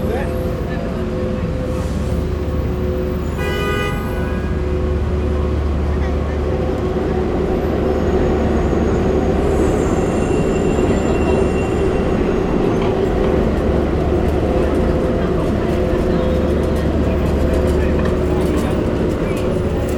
West 45th Street, W 46th St, New York, NY, United States - Max Neuhaus’ Times Square Sound Installation
Max Neuhaus sound installation in Times Square.
Humming, sounds of tourists and the subway.
Zoom h6
28 August, 01:27